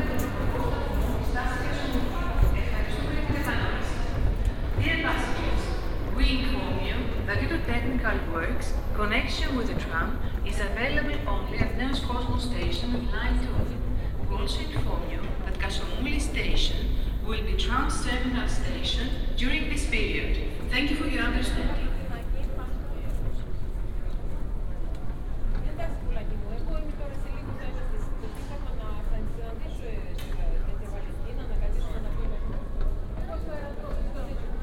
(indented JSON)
{
  "title": "Petralona-Monastiraki, Athens, Greece - (534) Metro ride from Petralona to Monastiraki",
  "date": "2019-03-10 16:28:00",
  "description": "Binaural recording of a ride with M1 line from Petralona to Monastiraki.\nRecorded with Soundman OKM + Sony D100",
  "latitude": "37.97",
  "longitude": "23.71",
  "altitude": "48",
  "timezone": "Europe/Athens"
}